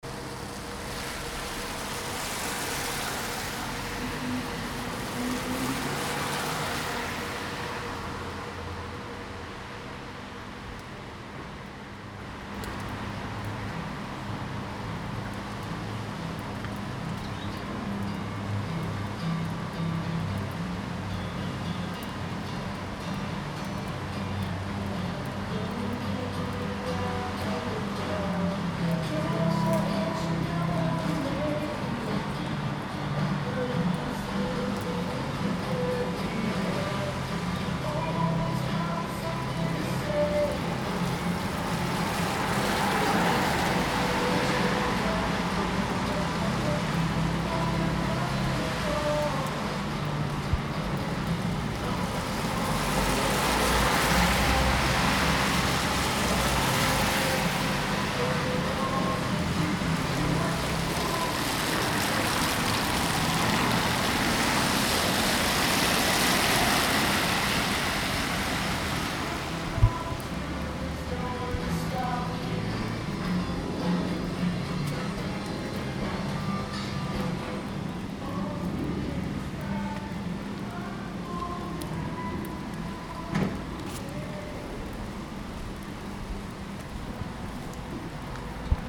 Stepney Bank, Newcastle upon Tyne, UK - Stepney Bank
Walking Festival of Sound
13 October 2019
Sounds of Bar and traffic